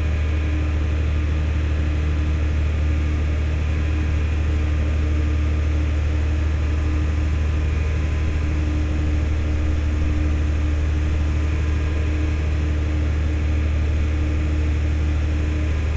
Mont-Saint-Guibert, Belgique - The dump
This is the biggest dump of Belgium. Here is a station, dealing with biogas.